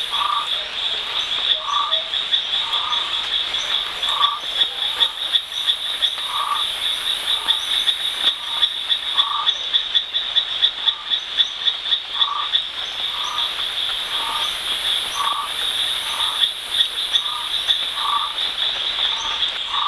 Sambava, Madagaskar - one froggy night @ Marojejy NP
Marojejy NP is a beautifull parc with friendly guides who know a lot. More than 60 species of frog, several endemic.